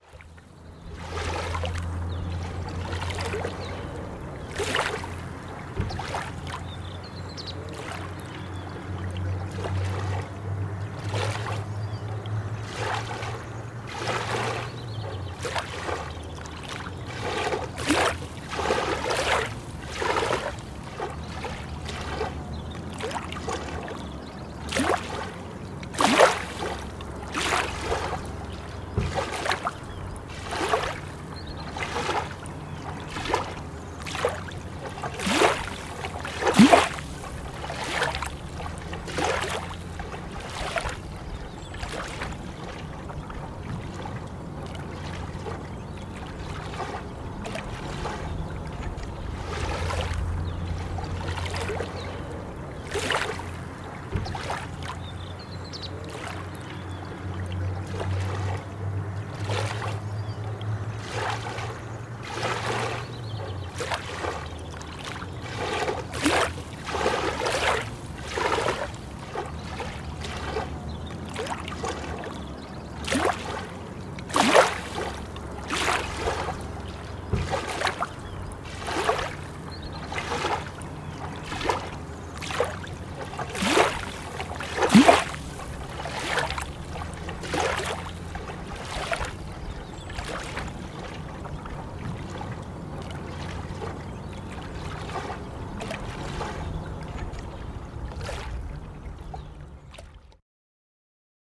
Tua no Inverno, Portugal
Tua, gravacao captada durante o Inverno de 2014 Mapa Sonoro do Rio Douro A winter soundscape in Tua, Portugal. Douro River Sound Map